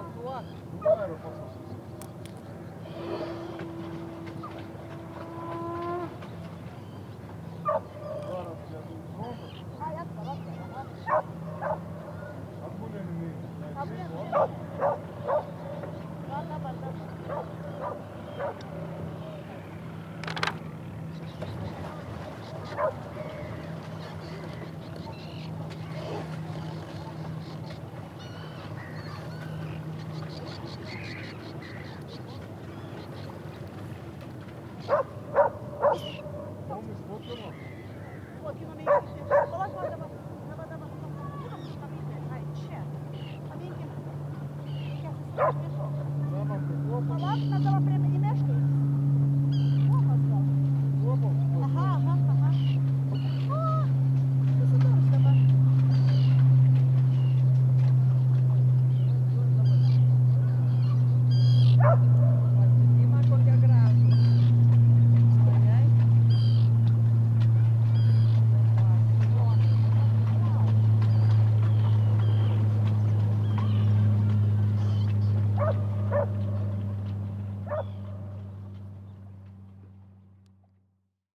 Nida, Lithuania - End of the middle peer
Recordist: Anita Černá.
Description: Recorded at the end of the peer on a sunny day. People on a boat talking, dog barking, water, birds and the sound of a boat floating. Recorded with ZOOM H2N Handy Recorder.